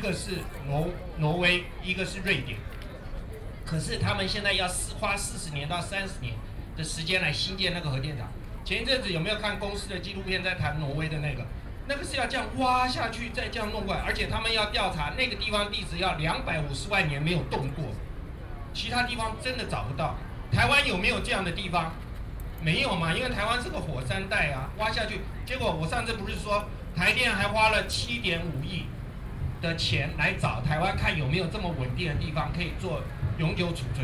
Freedom Square, Taiwan - Antinuclear Civic Forum
Antinuclear Civic Forum, Sony PCM D50 + Soundman OKM II